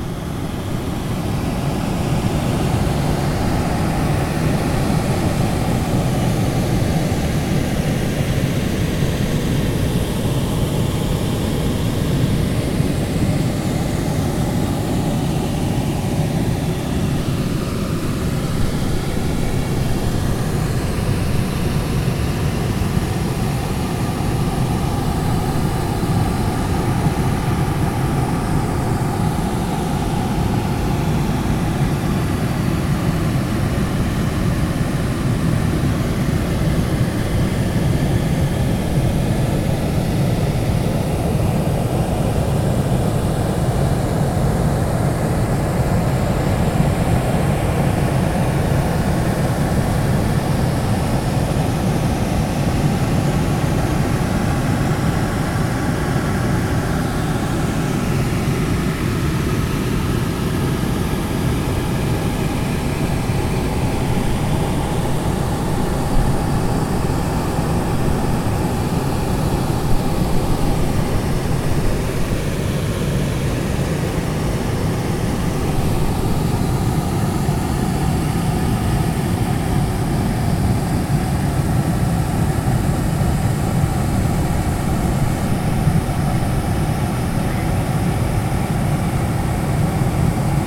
Koluszki, Poland - waterfall
Zoom H4n, dam on the river Mroga.
11 July 2012